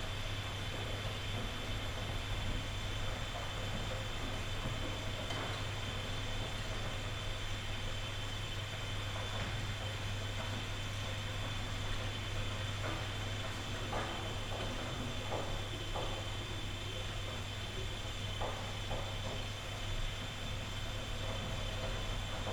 berlin, ohlauer str., waschsalon - laundry ambience
waiting for washing machine to finish, ideling... not a busy place today
(Sony PCM D50, Primo EM172)
Berlin, Germany, 29 March 2020